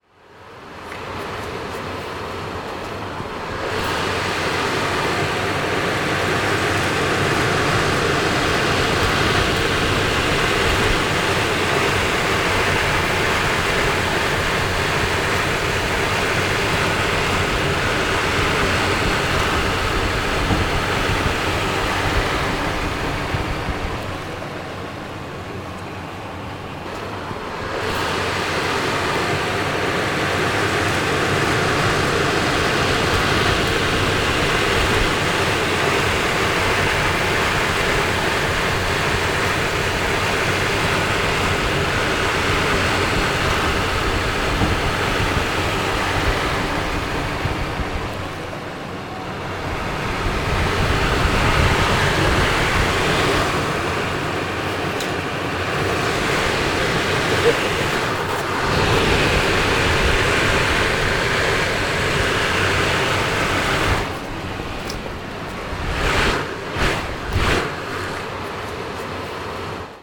The sounds of the local bakery ventilator
Région de Bruxelles-Capitale - Brussels Hoofdstedelijk Gewest, België - Belgique - Belgien, European Union, 26 March 2013, ~15:00